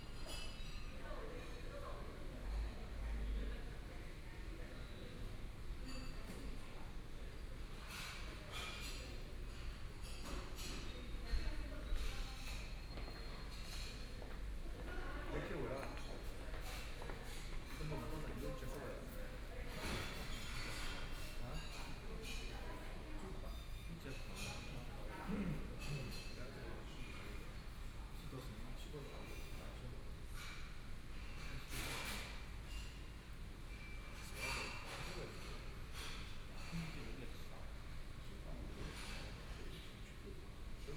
The Seagull On The Bund, Shanghai - In the hotel lobby
In the hotel lobby, Binaural recording, Zoom H6+ Soundman OKM II
Shanghai, China, 4 December 2013, 09:57